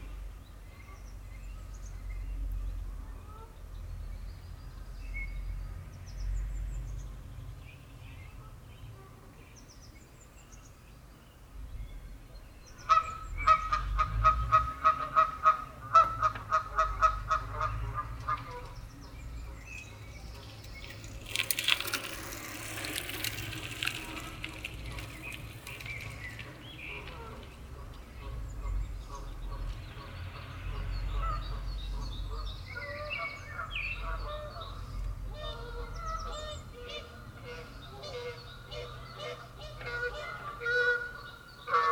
Canadian geese make a lot of noise near the Vas-t'y-frotte island, which is a very strange name. it means... huh, how to explain... in old french, something like : go there and rub you. That's not very clear. In fact, what is sure is that island represent a natural sanctuary, as it was a military domain until shortly. Birds especially go here in colony.